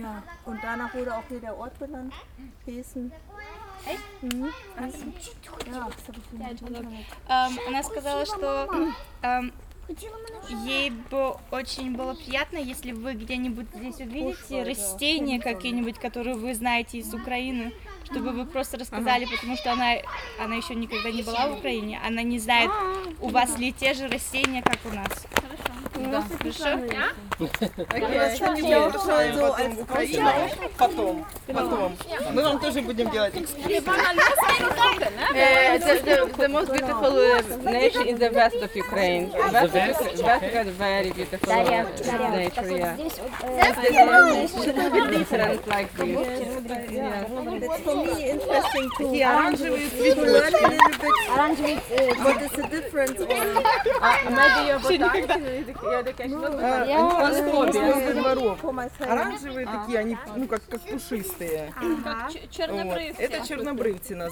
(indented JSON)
{
  "title": "Heessener Wald, Hamm, Germany - forest inter cultural",
  "date": "2022-07-19 15:35:00",
  "description": "Audio documentation of an excursion to the forest with Ukrainian women and children",
  "latitude": "51.71",
  "longitude": "7.84",
  "altitude": "82",
  "timezone": "Europe/Berlin"
}